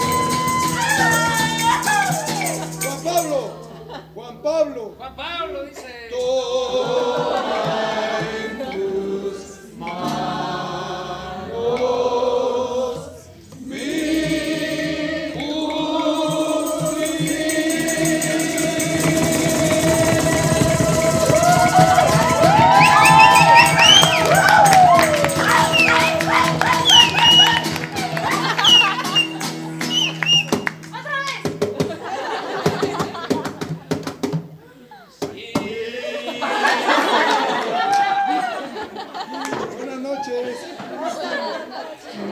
El proyecto liguistico quetzalteco
Language school graduation. Singing Bella Chao